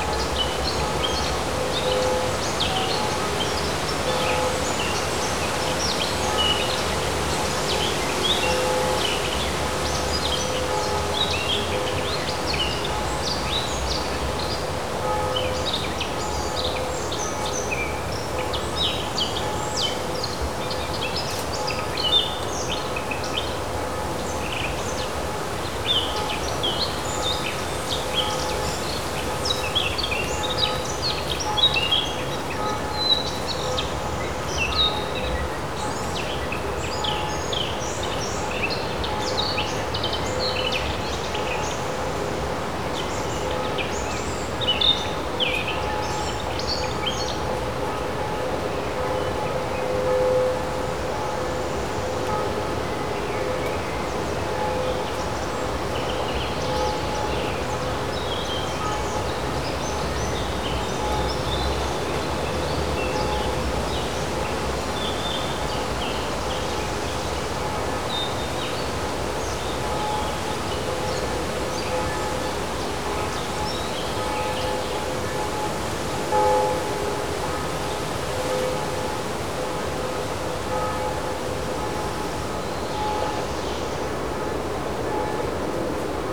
Poznan, Naramowice district, nature reserve "Zurawiniec" - bells and trees
pulsing sound of distant church bells spilling over the trees. peaceful, rustling forest ambience on a sunny Sunday.
Poznan, Poland